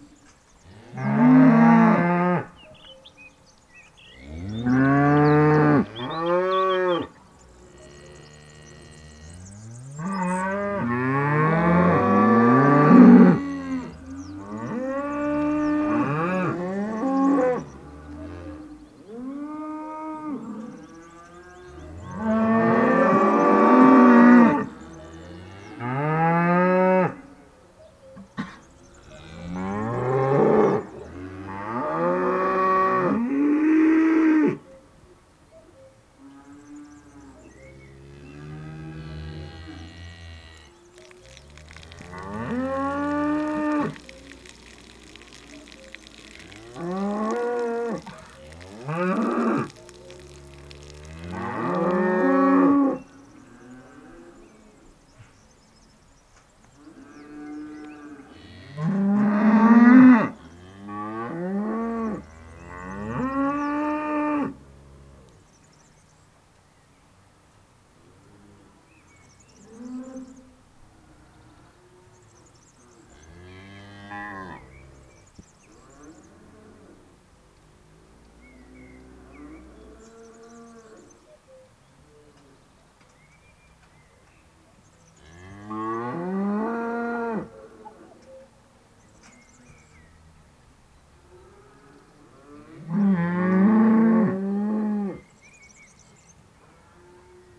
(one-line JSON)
{"title": "Les Bondons, France - Hungry cows", "date": "2016-04-29 07:30:00", "description": "Near a farm, the cows are hungry. They call the farmer loudly !", "latitude": "44.37", "longitude": "3.60", "altitude": "808", "timezone": "Europe/Paris"}